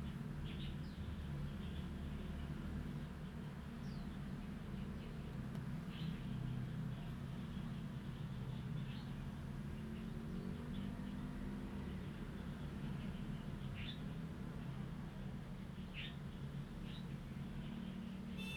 美人洞, Hsiao Liouciou Island - Birds singing
Birds singing, In the cave trail, Traffic Sound
Zoom H2n MS +XY
Pingtung County, Taiwan, 1 November 2014